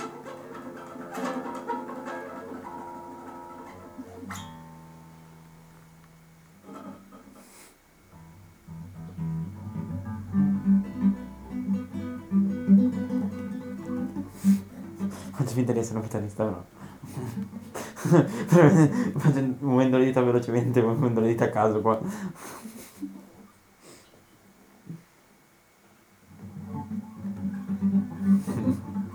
Pavia, Italy - randomly playing guitar stings
Boy casually playing with guitar stings